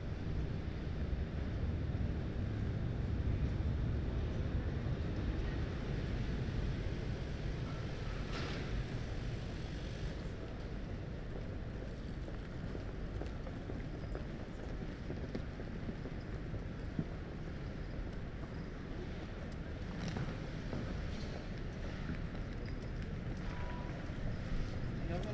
Central Station, The Hague, The Netherlands - Commuters
Recorded at the entrance of The Hague Central station with Soundfield st450 microphone.
14 March 2014, 11:43